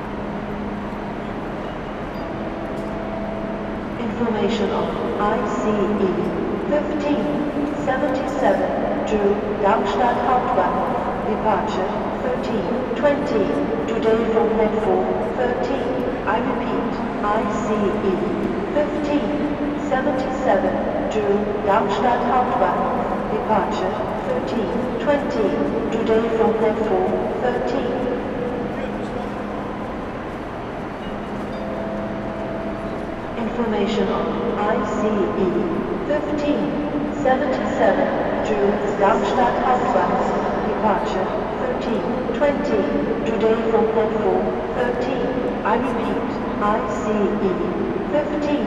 A train is arriving. But not very many people are leaving the train. An anouncement just stops without telling when the train to Neuwied is leaving, kind of significant for the situation. Perhaps there is no 'Abfahrt'... Later the sound for the anouncement is repeted twice, to reassure the listeners? The microphone walks back to the platform that connects all platforms. It is a little bit more busy. A lot of anouncements for other trains are made. There are a lot of suitcases, but different from the days before Corona you can count them. Another train is arriving. Some people are leaving, again a lot of trolleys. Some passengers are arguing. An anouncement anounces a train to Darmstadt, on the other track a train to Berlin is anounced by text, but the text vanishes and the train to Berlin on track 12 becomes the train to Darmstadt formerly on track 13. Nearly nobody is boarding. At least the train from Wächtersback is arriving.